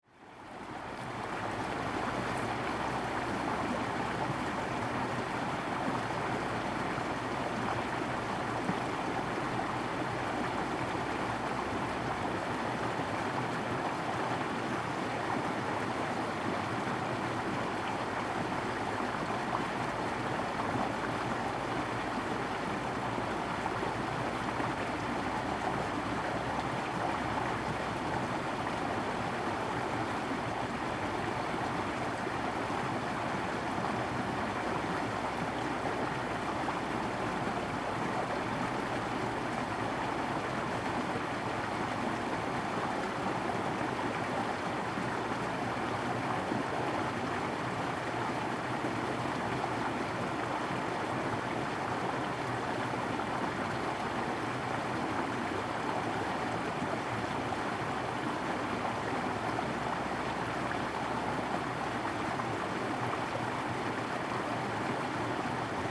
In Farmland, Water sound
Zoom H2n MS +XY